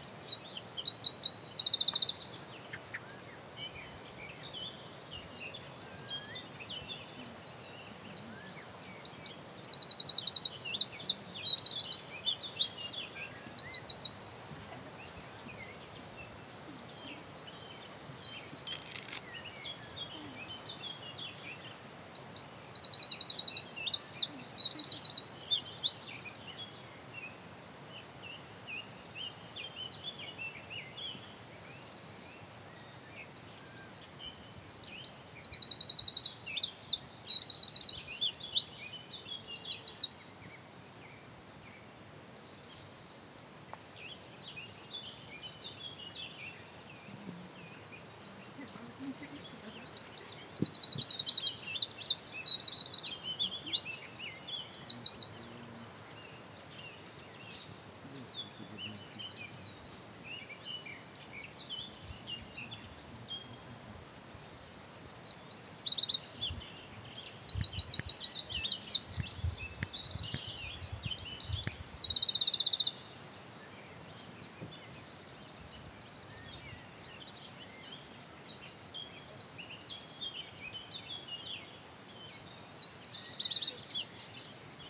Grange is the largest Neolithic stone circle in Ireland. This recording is on the eastern side of the circle with the microphone facing east. The recording was made under a tree and the loudest bird was sitting above us.